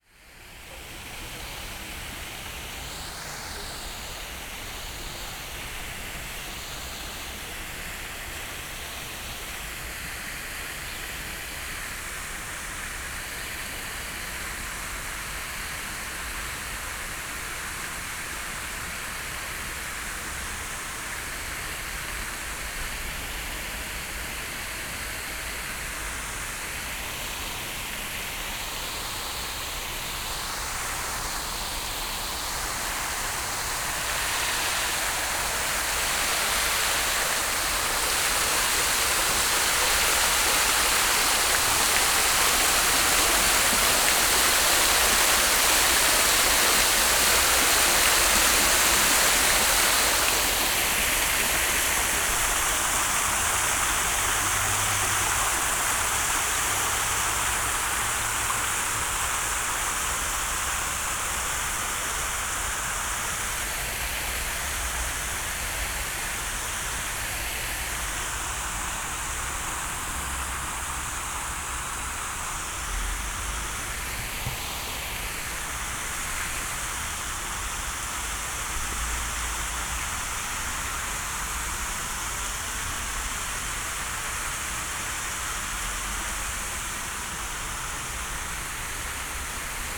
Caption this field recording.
short walk around fountain at Novi trg, Ljubljana. water sounds reflecting on things and walls around the fountain. weekday morning, not many people around. (Sony PCM D50, DPA4060)